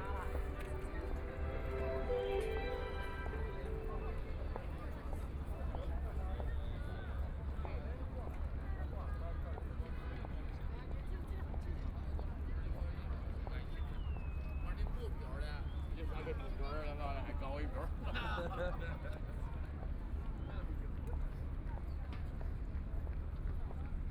Many tourists coming and going, The Bund (Wai Tan), Ship in the river, Binaural recording, Zoom H6+ Soundman OKM II
Huangpu, Shanghai, China